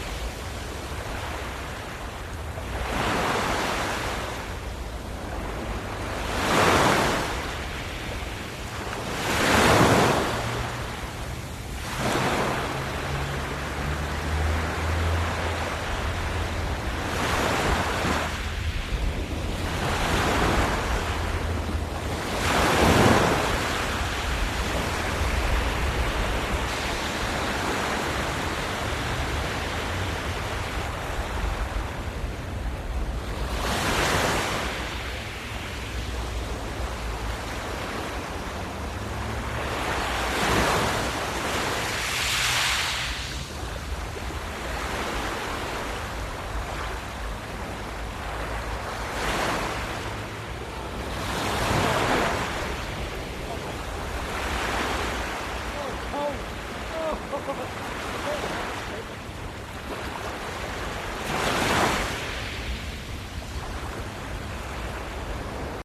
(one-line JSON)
{"title": "sounds of the seaside / pebble beach / waves lapping", "date": "2010-07-31 14:08:00", "description": "Walking down on a pebble beach to waters edge. Sound of the waves lapping.", "latitude": "50.62", "longitude": "-2.45", "altitude": "5", "timezone": "Europe/London"}